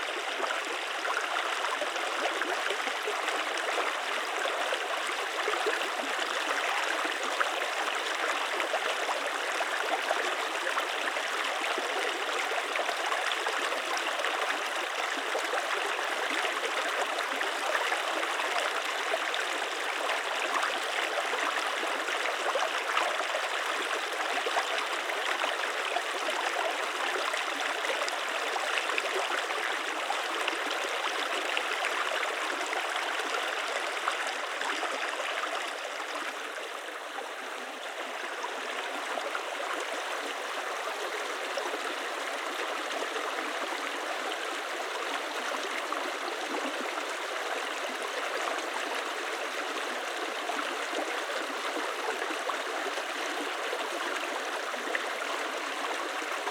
Champsecret, France - Chemin vers la rivière
We were two on this take, We wanted to make one take from the road through the forest path to get to the river.